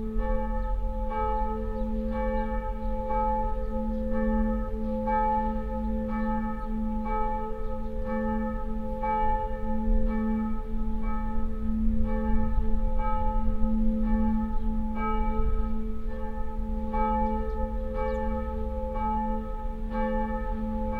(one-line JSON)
{"title": "lech, arlberg, church bells", "date": "2011-06-06 10:30:00", "description": "In the early afternoon, the church bells of the old village church recorded in the church surrounding graveyard in winter.\nUnfortunately some wind disturbances.\ninternational soundscapes - topographic field recordings and social ambiences", "latitude": "47.21", "longitude": "10.14", "altitude": "1446", "timezone": "Europe/Vienna"}